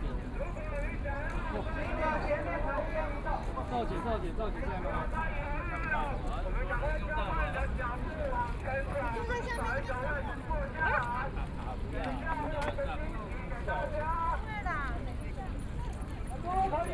National Chiang Kai-shek Memorial Hall - Protest event
A group of young people through a network awareness, Nearly six million people participated in the call for protest march, Taiwan's well-known writers and directors involved in protests and speeches, Binaural recordings, Sony PCM D50 + Soundman OKM II
Taipei City, Zhongzheng District, 林森南路地下道, October 2013